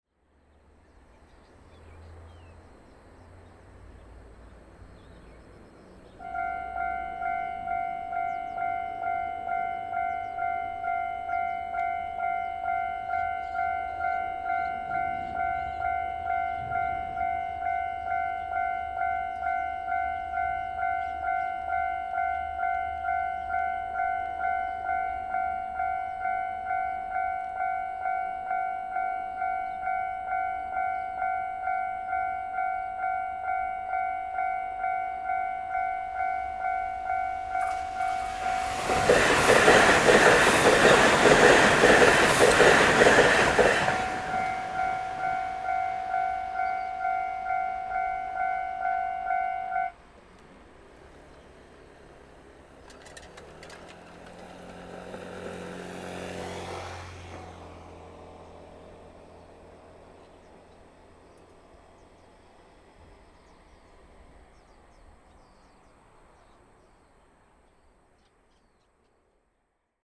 In the railway level crossing, Train traveling through, The weather is very hot
Zoom H6 MS+Rode NT4